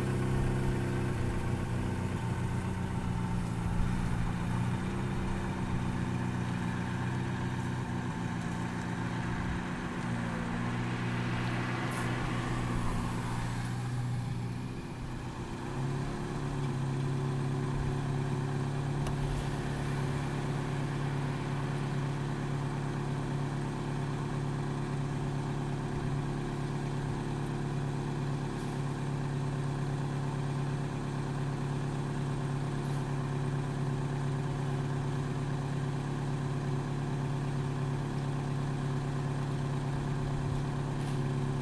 {
  "title": "Allentown, PA, USA - North Eastern Side of Campus",
  "date": "2014-12-07 03:00:00",
  "description": "A partly cloudy day. The temperature was just above freezing. A good amount of traffic covered up the bell of 3pm from Muhlenberg College's Haas building.",
  "latitude": "40.60",
  "longitude": "-75.51",
  "altitude": "106",
  "timezone": "America/New_York"
}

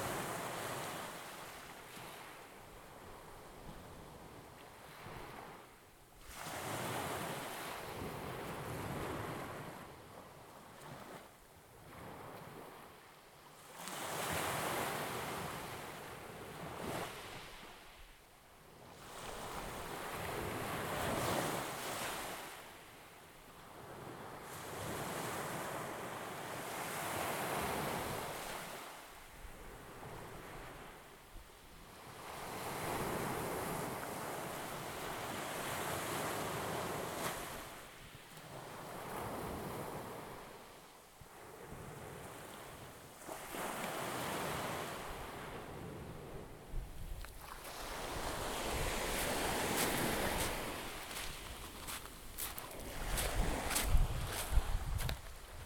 {
  "title": "Agiofaraggo Canyon Footpath, Festos, Greece - Waves on pebbles in Agiofarago (best)",
  "date": "2017-08-16 23:09:00",
  "description": "The interaction of the water with the pebbles has been captured in this recording.",
  "latitude": "34.93",
  "longitude": "24.78",
  "altitude": "16",
  "timezone": "Europe/Athens"
}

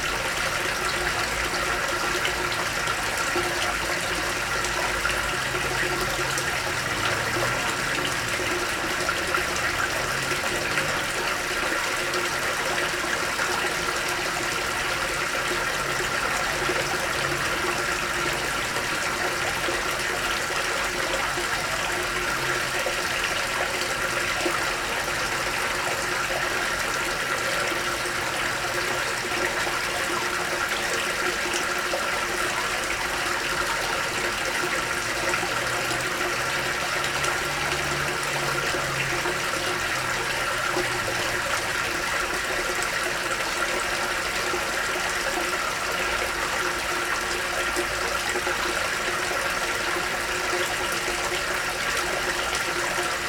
{
  "title": "Lavoir Saint Léonard Honfleur (B2)",
  "date": "2011-02-18 19:00:00",
  "description": "Lavoir Saint Léonard à Honfleur (Calvados)",
  "latitude": "49.42",
  "longitude": "0.23",
  "altitude": "7",
  "timezone": "Europe/Paris"
}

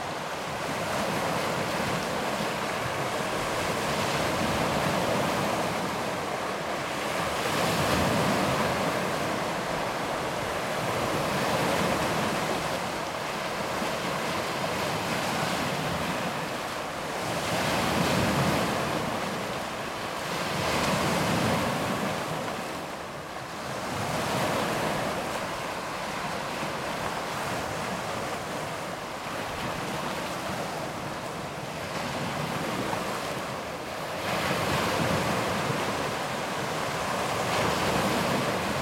{
  "title": "Plaża dla psów w Brzeźnie, Przemysłowa, Gdańsk, Polska - Sea Waves On a Rocky Shore",
  "date": "2022-07-08 17:00:00",
  "description": "This recording was made with a Sony PCM-D100 handheld placed on a Rycote suspension. On top of a standard Sony windshield, I have placed Rycote BBG Windjammer. It was a fairly windy afternoon.",
  "latitude": "54.41",
  "longitude": "18.65",
  "timezone": "Europe/Warsaw"
}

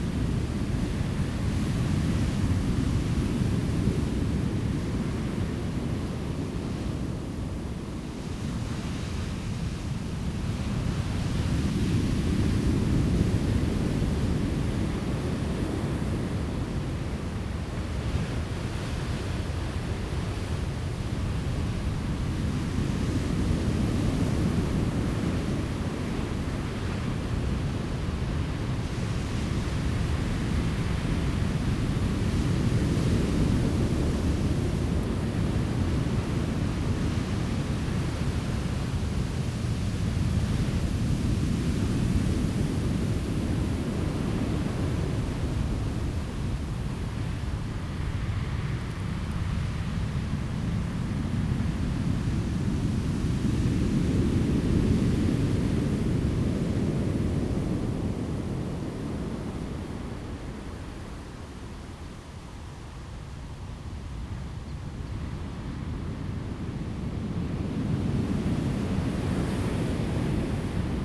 {
  "title": "八仙洞遊客中心, Changbin Township - sound of the waves",
  "date": "2014-10-09 10:05:00",
  "description": "sound of the waves, Wind and waves are great\nZoom H2n MS+XY",
  "latitude": "23.39",
  "longitude": "121.48",
  "altitude": "6",
  "timezone": "Asia/Taipei"
}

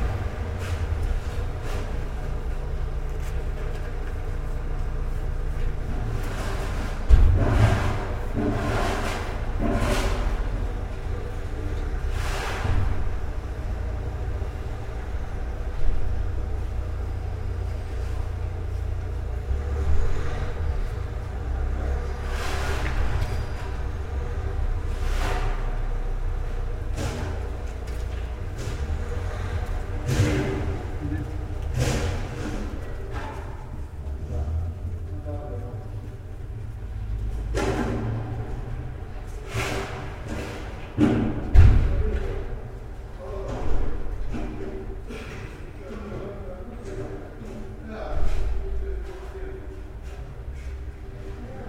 {
  "title": "morning snow scraping in the courtyard, riga, latvia",
  "description": "a worker scrapes snow from growing piles into the drains in an echoey central riga courtyard",
  "latitude": "56.95",
  "longitude": "24.13",
  "altitude": "11",
  "timezone": "Etc/GMT+2"
}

